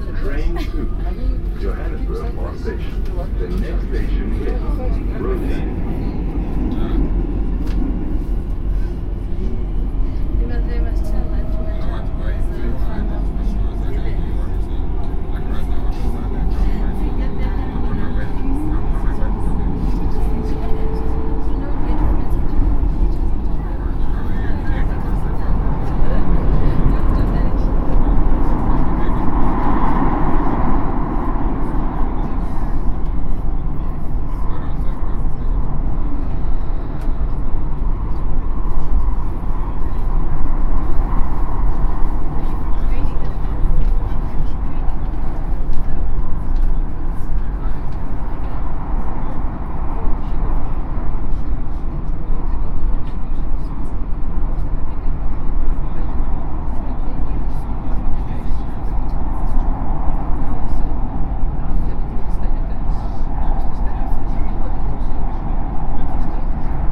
Sandton, South Africa - on a Gautrain into Jozi...
sounds and voices on a Gautrain from Pretoria into Johannesburg Park Station...